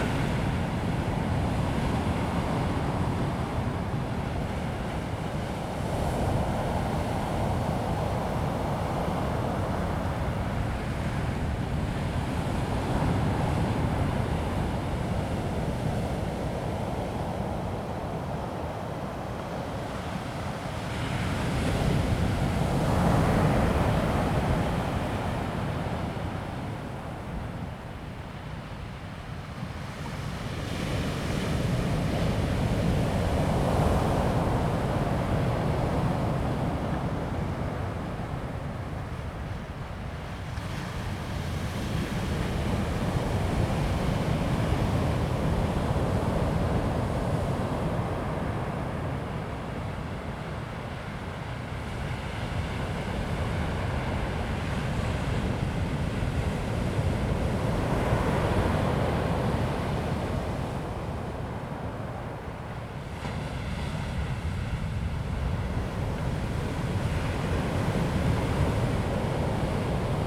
牡丹鄉台26線, Mudan Township - On the coast
On the coast, Sound of the waves
Zoom H2n MS+XY